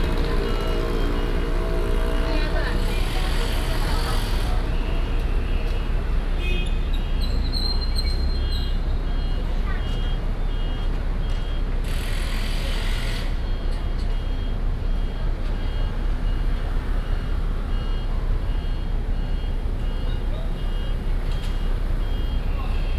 Zhongshan Rd., Central Dist., Taichung City - Next to the bus station
Next to the bus station, Traffic Sound